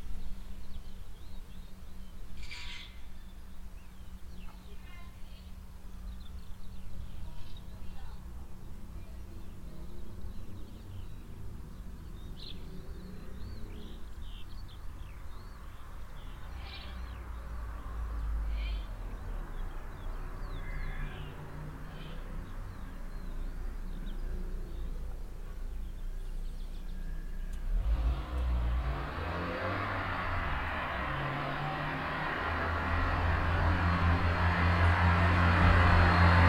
putscheid, town center, siesta silence
In the center of the town at siesta time. Passengers walking by on the footwalk - a distant dog barking, overall birds, a door - a car vanishing in the valley - a boy with a dog.
Putscheid, Ortszentrum, Mittagsruhe
Im Zentrum des Ortes zur Mittagszeit. Leute laufen auf einem Fußweg vorbei - in der Ferne bellt ein Hund, Vögel überall, eine Tür - ein Auto verschwindet im Tal - ein Junge mit einem Hund.
Putscheid, centre-ville, le silence à l’heure de la sieste
En centre-ville à l’heure de la sieste. Des piétons marchent sur le trottoir – un chien aboie dans le lointain, des oiseaux partout – une voiture qui part en direction de la vallée – un enfant avec un chien
Project - Klangraum Our - topographic field recordings, sound objects and social ambiences
4 August 2011, 19:22